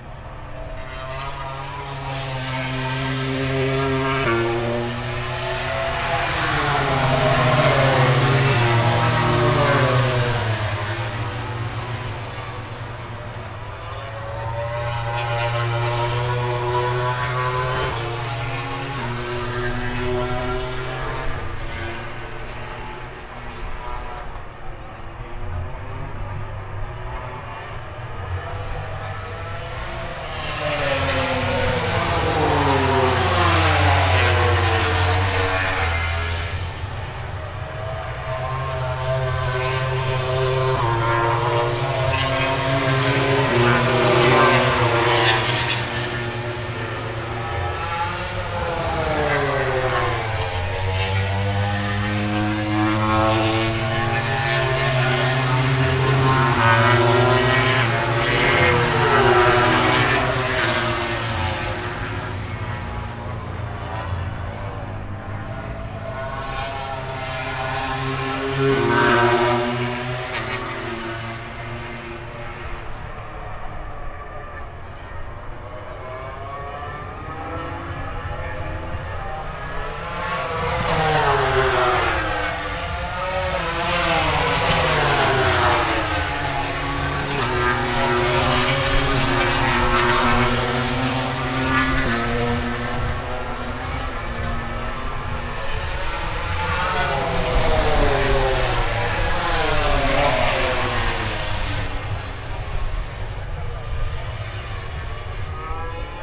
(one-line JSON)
{"title": "Silverstone Circuit, Towcester, UK - british motorcycle grand prix 2022 ... moto grand prix ...", "date": "2022-08-05 14:03:00", "description": "british motorcycle grand prix ... moto grand prix free practice two ... dpa 4060s on t bar on tripod to zoom f6 ...", "latitude": "52.07", "longitude": "-1.01", "altitude": "157", "timezone": "Europe/London"}